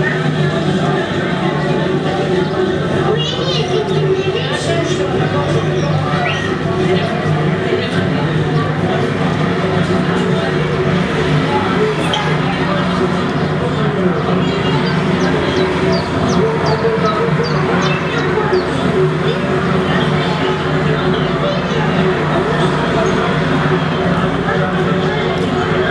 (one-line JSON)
{"title": "Ujezd, Phone Booth", "date": "2011-05-18 09:17:00", "description": "VNITRUMILIMETRU\nIts site-specific sound instalation. Sounds of energic big cities inside bus stops and phone booths in small town.\nOriginal Sound of Istambul by\nAdi W.", "latitude": "49.47", "longitude": "17.12", "altitude": "223", "timezone": "Europe/Prague"}